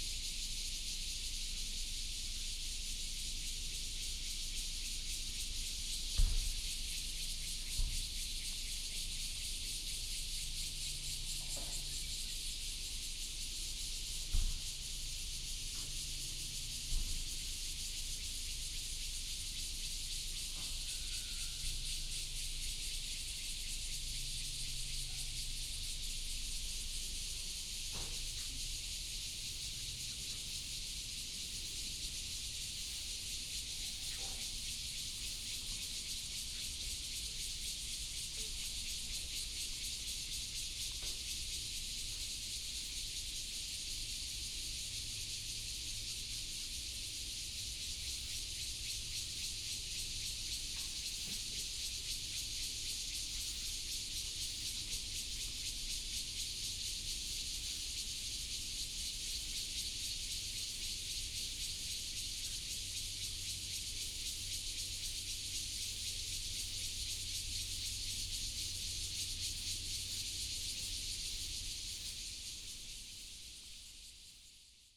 齋明寺, Taoyuan City - In the courtyard of the temple
In the courtyard of the temple, Cicada and birds sound
25 July, ~09:00